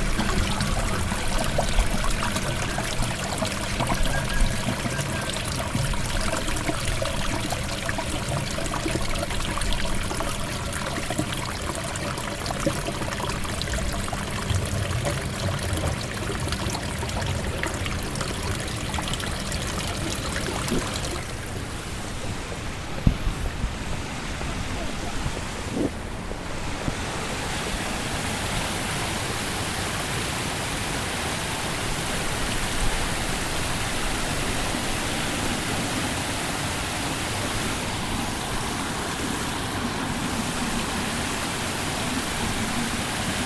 Freyburg Square, Chancery Street - Fountain Pumps